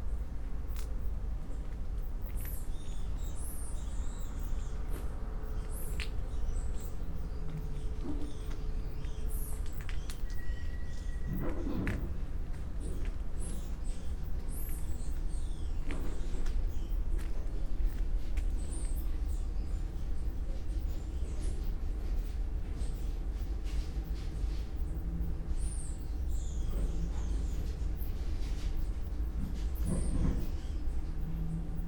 Vicolo dei Calafai, Trieste, Italy - near Comando Militare
night creatures and their doings
8 September 2013